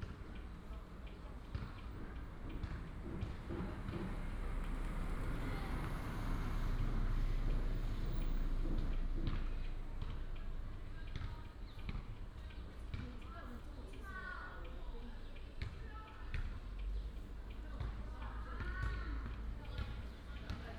Village main street, Bird cry, traffic sound, Near primary school, Construction sound
Taimali Township, 大溪土板產業道路, 23 March, ~16:00